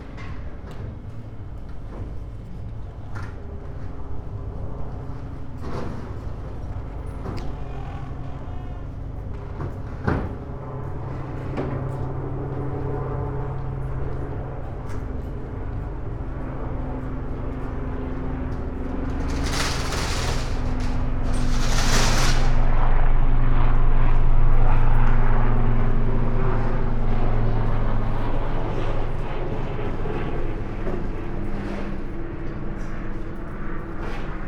asakusa, tokyo - bamboo sticks, wind, few steps on an empty street
Tokyo, Japan